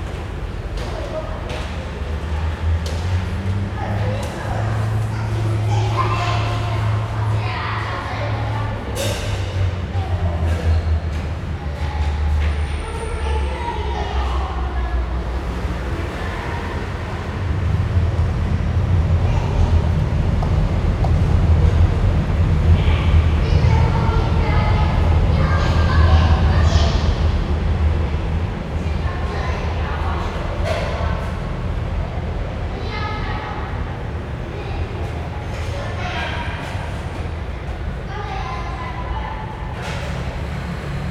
Sec., Bao’an St., Shulin Dist., New Taipei City - In the underground passage
In the underground passage, the railway tracks
Zoom H4n XY+Rode NT4